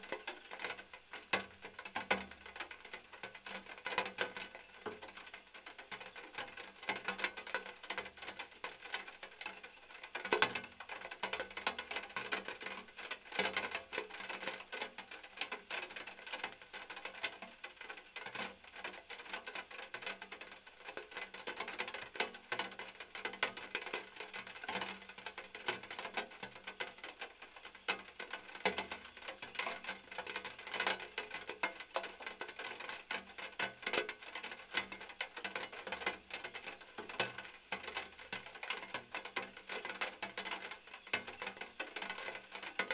Siaudiniai, Lithuania, raining on mound sign
contact microphone on a mound-sign
5 May 2012